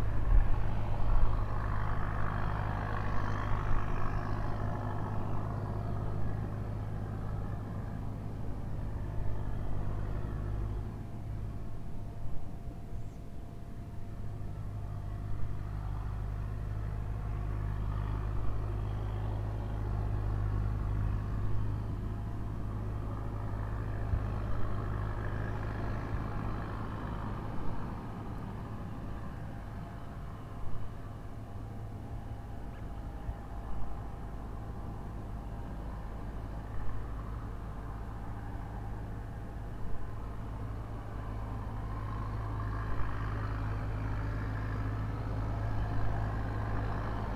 neoscenes: fixing the Medano Pass road